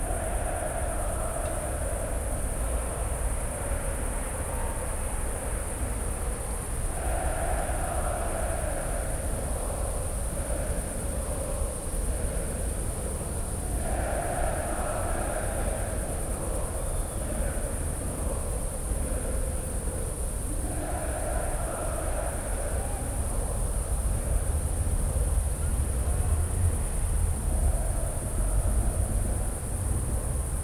Maribor, Mestni park - distant sounds from the soccer arena
sounds from the nearby soccer arena, heard in Mestni park. Maribor plays against Zagreb.
(PCM D-50, DPA4060)